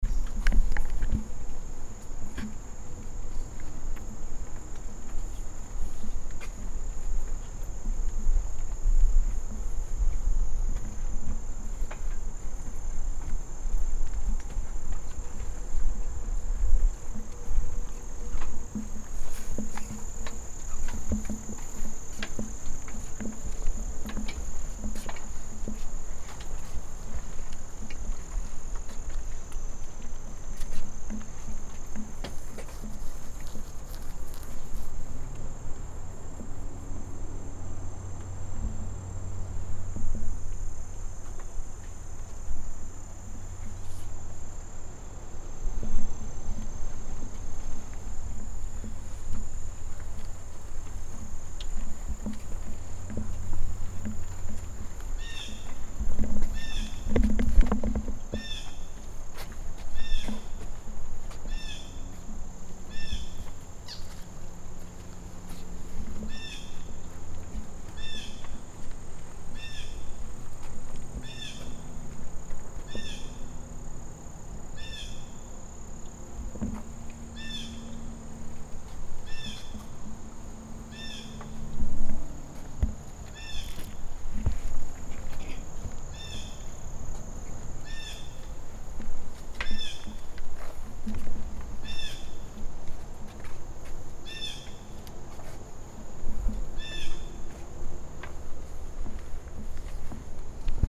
A recording taken during a walk on the bridge over the pond.
CT, USA, 17 September 2018, 14:00